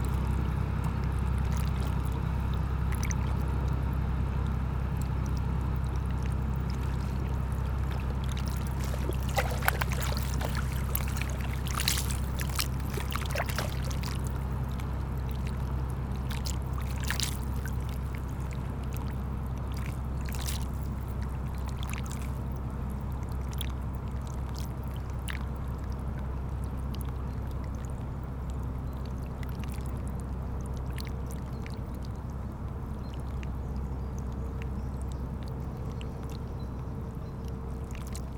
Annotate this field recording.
A small boat called the Tigris is passing by on the Seine river.